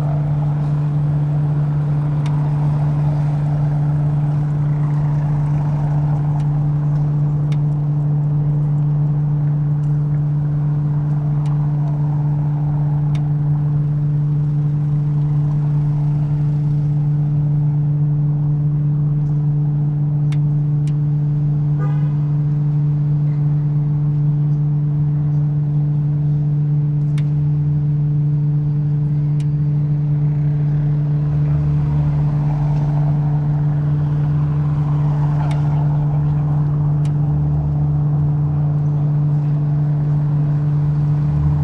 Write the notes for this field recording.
air vent located at street level blasting very hot air from the basement (very likely from laundry of the hotel), making constant hum. the grating of the vent expands in the hot air stream, bends and crackles.